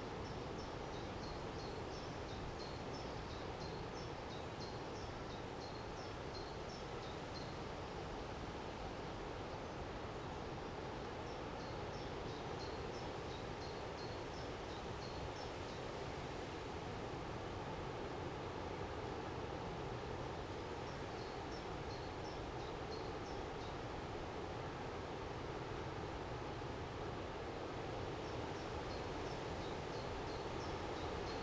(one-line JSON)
{"title": "workum, suderséleane: small forest - the city, the country & me: wind blows through trees", "date": "2015-06-13 18:29:00", "description": "stormy late afternoon, wind blows through trees\nthe city, the country & me: june 13, 2015", "latitude": "52.97", "longitude": "5.41", "altitude": "2", "timezone": "Europe/Amsterdam"}